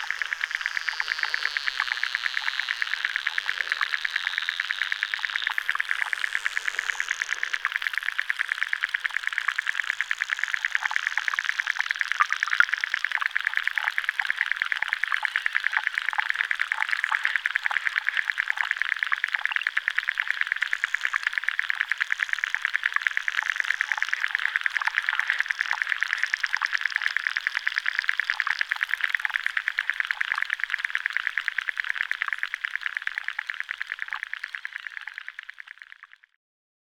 Tauragnai, Lithuania, lake Labe underwater
Hydrophones in lake Labe.
Utenos apskritis, Lietuva, 15 August 2021